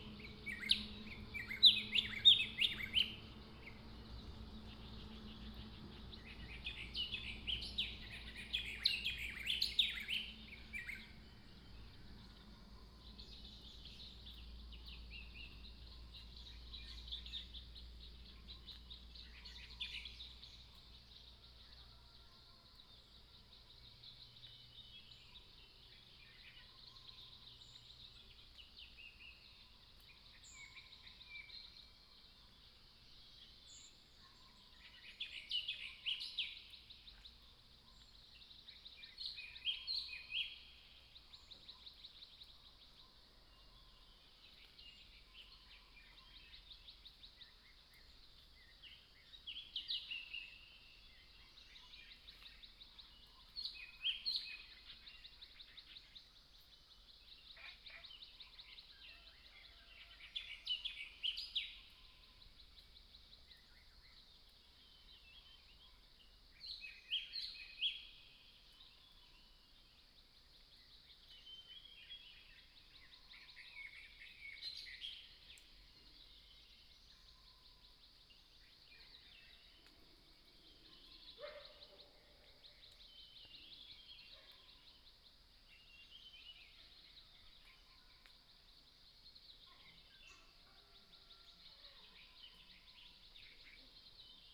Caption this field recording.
Bird calls, Frogs sound, at the Hostel